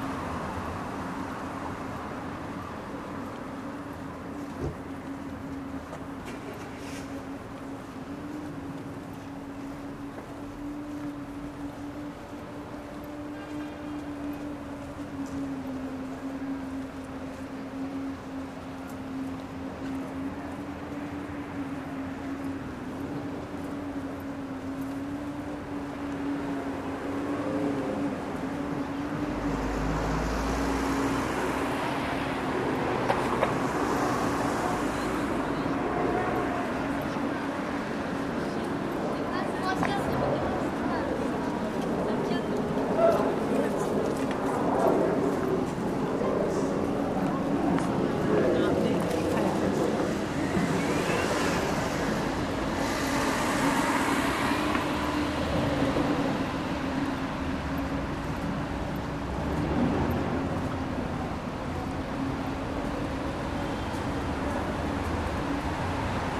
Fullmoon Nachtspaziergang Part V
Fullmoon on Istanbul, walking into Büyükdere Caddesi crossroads in Şişli.
23 October 2010, 22:26